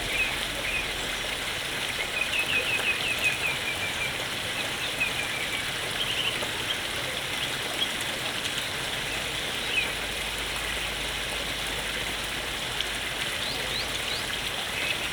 {"title": "Loaning Head, Alston, UK - Evening walk", "date": "2022-03-27 18:24:00", "description": "Evening walk from Garrigill to Loaning Head", "latitude": "54.77", "longitude": "-2.40", "altitude": "364", "timezone": "Europe/London"}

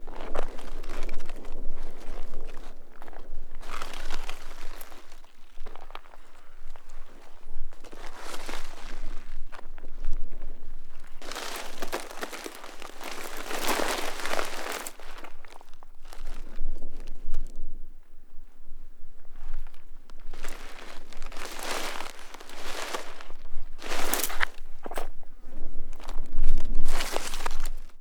{"title": "desert north of Nablus", "date": "2007-10-28 12:21:00", "description": "project trans4m orchestra", "latitude": "32.24", "longitude": "35.37", "altitude": "-1", "timezone": "Asia/Jerusalem"}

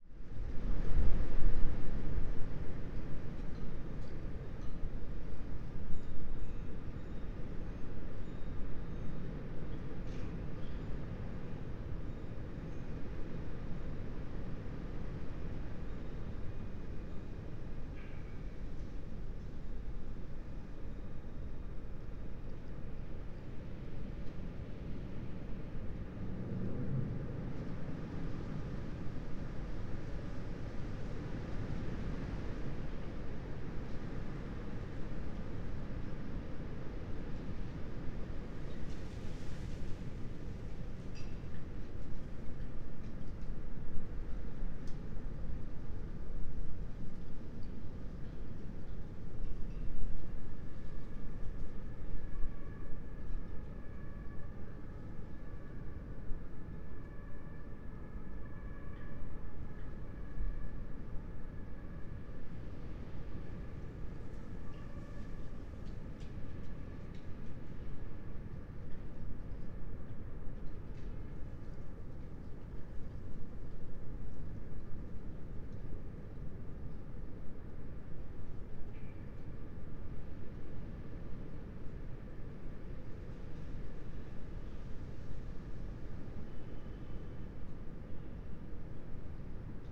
{"date": "2022-02-18 23:24:00", "description": "23:24 Berlin Bürknerstr., backyard window - Hinterhof / backyard ambience", "latitude": "52.49", "longitude": "13.42", "altitude": "45", "timezone": "Europe/Berlin"}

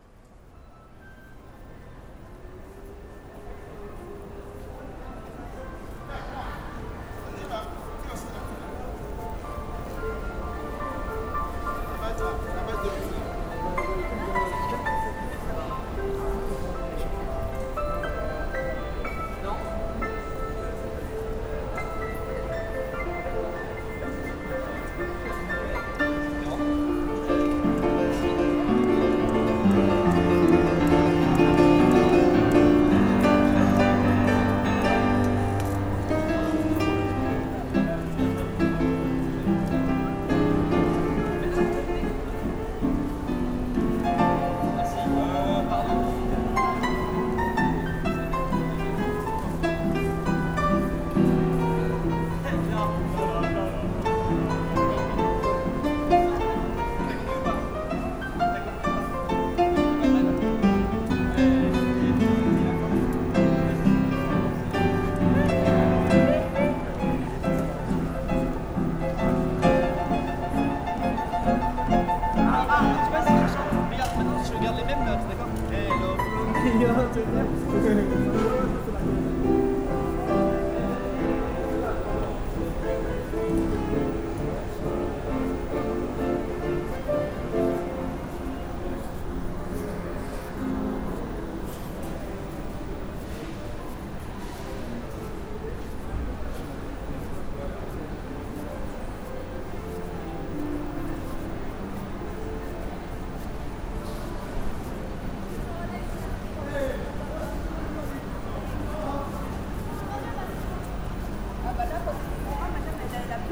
{"title": "Gare Saint-Lazare, Paris, France - Saint-Lazare station", "date": "2016-09-16 15:30:00", "description": "Taking the train to Rouen in the Paris Saint-Lazare station.", "latitude": "48.88", "longitude": "2.33", "altitude": "47", "timezone": "Europe/Paris"}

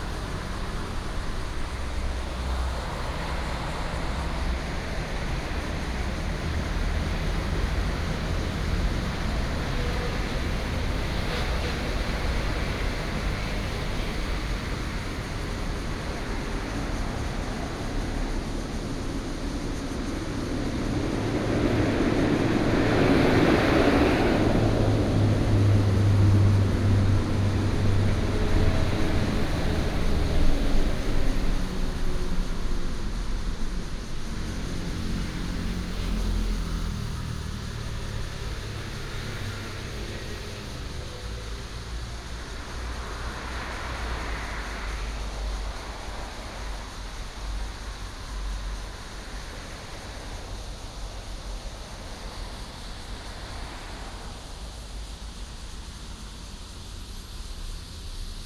{"title": "Sec., Huannan Rd., Pingzhen Dist. - traffic sound", "date": "2017-07-26 06:42:00", "description": "Cicada cry, The train runs through, traffic sound", "latitude": "24.94", "longitude": "121.21", "altitude": "151", "timezone": "Asia/Taipei"}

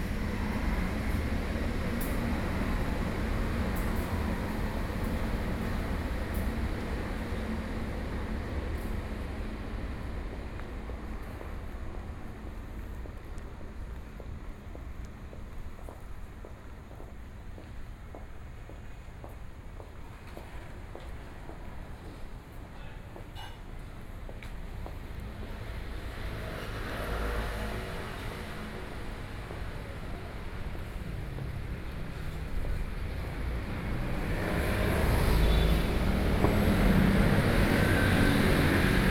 {"title": "Lane, Section, Zhōngyāng North Rd, Beitou - Walk", "date": "2012-10-05 22:26:00", "latitude": "25.14", "longitude": "121.49", "altitude": "9", "timezone": "Asia/Taipei"}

